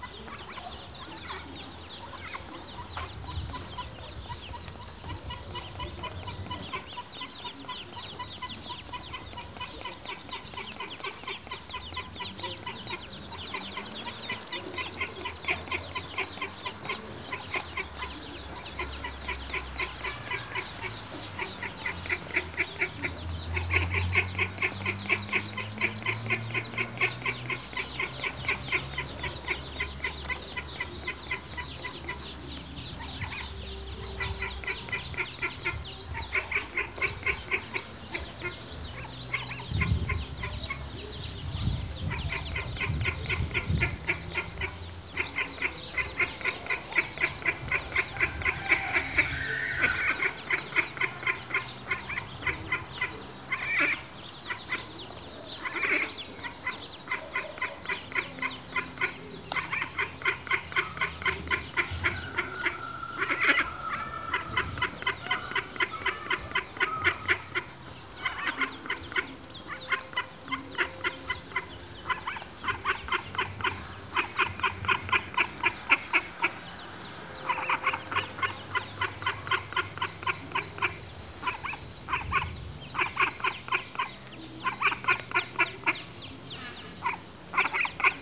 Morasko, in front of Christ Missionaries Order - ducklings on a courtyard
a duck with thirteen ducklings walking them around the courtyard of an order. quacking with each other constantly.